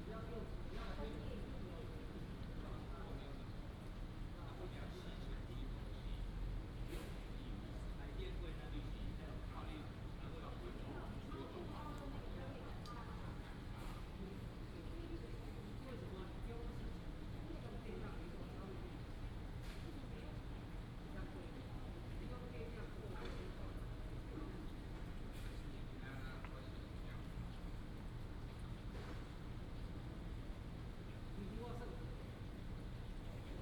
Late at night, traffic sound, Binaural recordings, Sony PCM D100+ Soundman OKM II
Hsinchu City, Taiwan